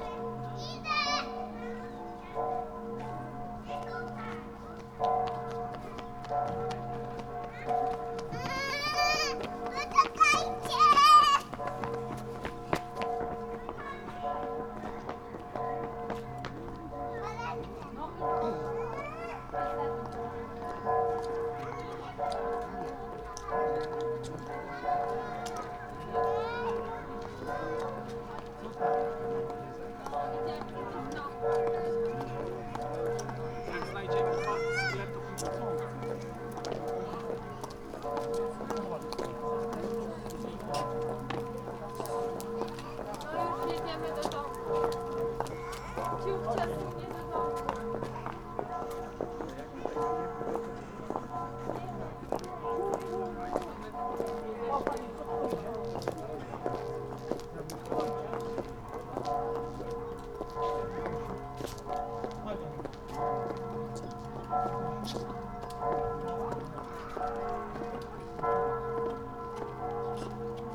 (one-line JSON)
{"title": "Bielawa, Polen - village bells, voices", "date": "2018-10-21 16:35:00", "description": "Sunday afternoon, Bielawa village bells, pedestrians, children, voices\n(Sony PCM D50)", "latitude": "50.68", "longitude": "16.59", "altitude": "344", "timezone": "Europe/Warsaw"}